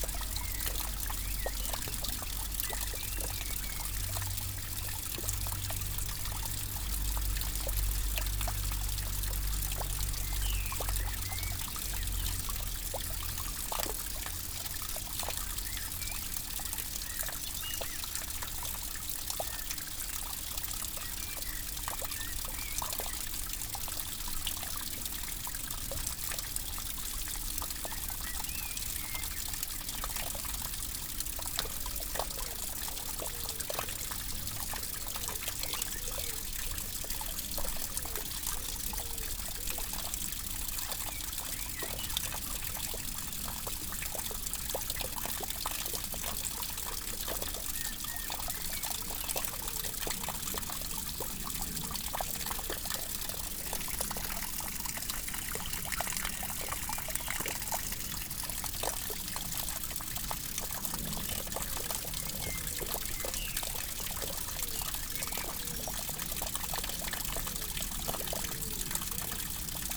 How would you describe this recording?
We are in an abandoned farm. A constant rain is falling since this morning. A dismantled gutter dribble on the nettles.